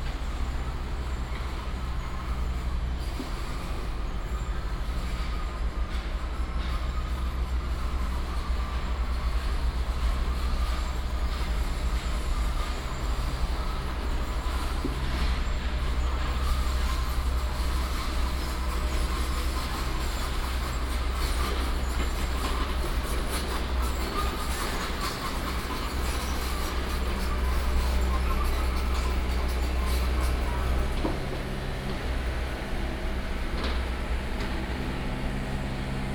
敬軍新村, Hsinchu City - Construction sound
In the old community, Construction sound, Binaural recordings, Sony PCM D100+ Soundman OKM II
Hsinchu City, Taiwan, 27 September 2017, ~16:00